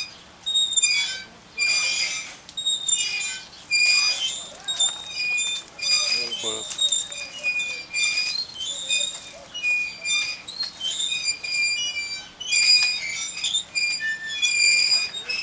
Santiago de Cuba, children swing in a park